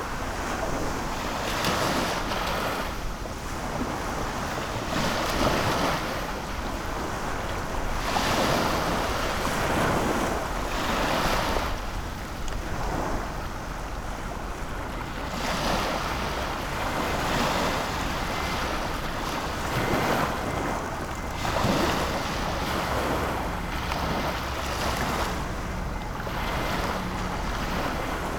淡水榕堤, Tamsui District, New Taipei City - Tide
At the quayside, Tide
Binaural recordings
Sony PCM D50 + Soundman OKM II
New Taipei City, Taiwan